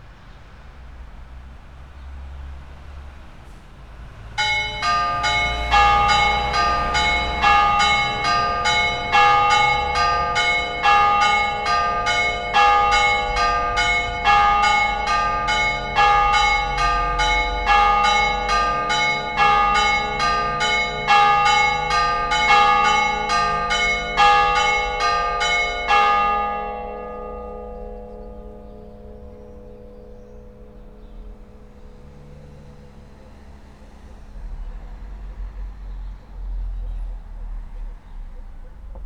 Kos, Greece, morning at the church
every morning aproximatelly at 7:00 we hear the church bells ringing